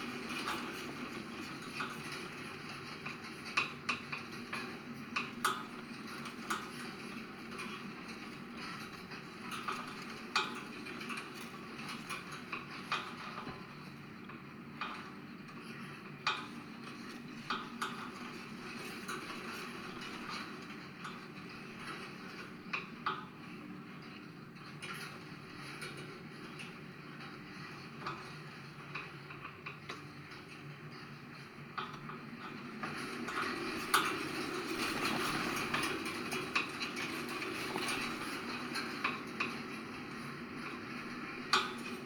metallic fence recorded with contact mics